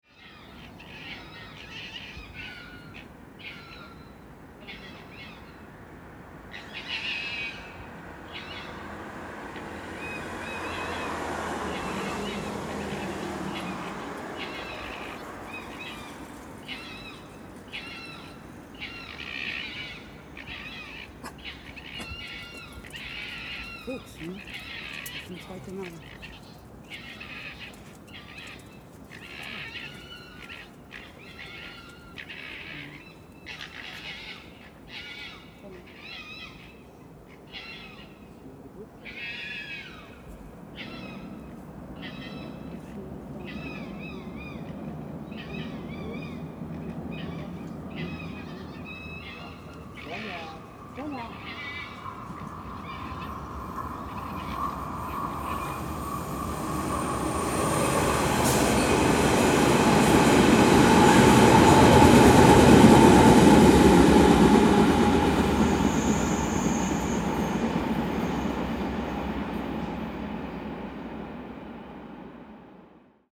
Wedding, Berlin, Germany - Foxes playing!
Foxes make some pretty bloodcurdling sounds. These were out of sight down in the railway cutting, but attracted listeners nevertheless.